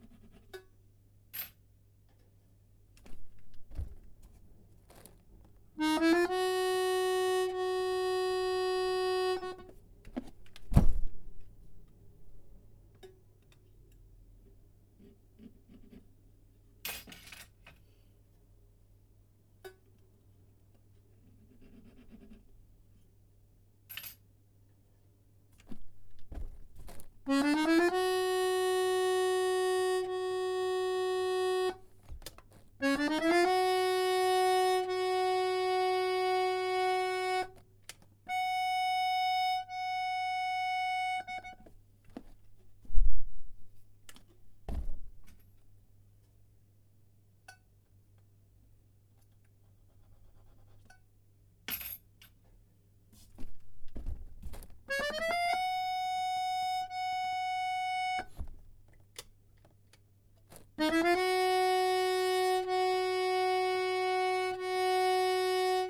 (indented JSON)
{
  "title": "largo cesare reduzzi 5 - Mirko Ceccaroli accordion repair workshop",
  "date": "2018-03-27 16:25:00",
  "description": "tuning an accordion in my laboratory",
  "latitude": "41.85",
  "longitude": "12.41",
  "altitude": "53",
  "timezone": "Europe/Rome"
}